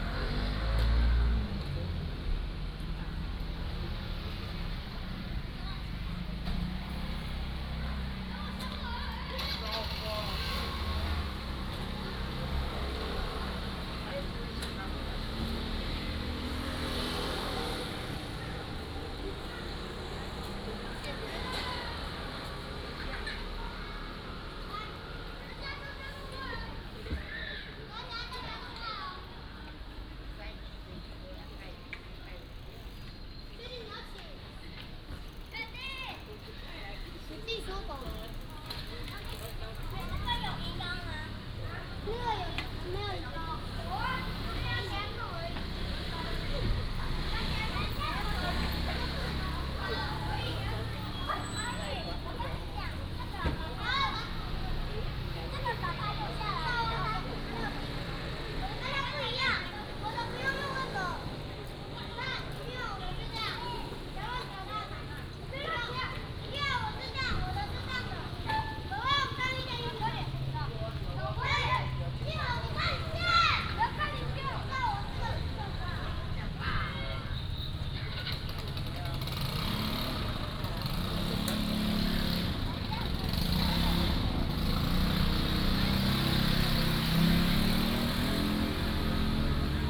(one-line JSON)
{"title": "瑞穗村, Rueisuei Township - small Town", "date": "2014-10-08 16:26:00", "description": "small Town, Traffic Sound, Children, Next to the Market", "latitude": "23.50", "longitude": "121.38", "altitude": "100", "timezone": "Asia/Taipei"}